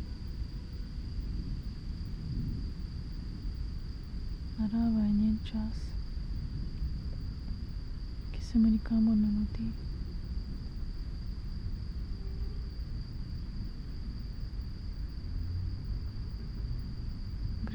meadow, spoken words, grass ears, wind, crickets, traffic noise from afar
Vzhodna Slovenija, Slovenija